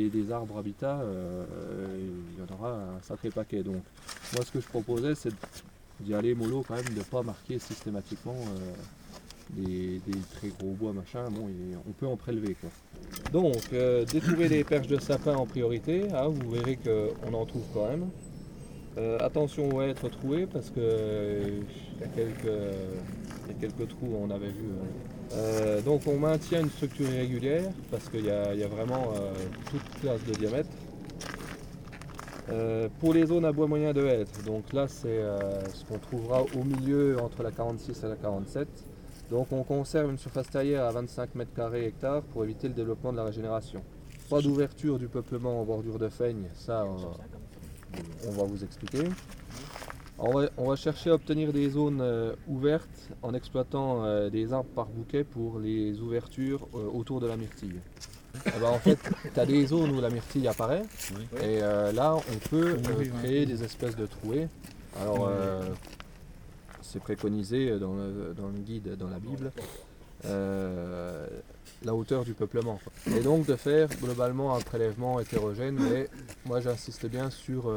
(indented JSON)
{
  "title": "Préparation au martelage ONF - Réserve Naturelle du Massif du Ventron, France",
  "date": "2012-10-25 08:02:00",
  "description": "Consigne de l'ONF avant martelage des parcelles 46 & 47 de la réserve naturelle du grand ventron.",
  "latitude": "47.97",
  "longitude": "6.91",
  "altitude": "924",
  "timezone": "Europe/Paris"
}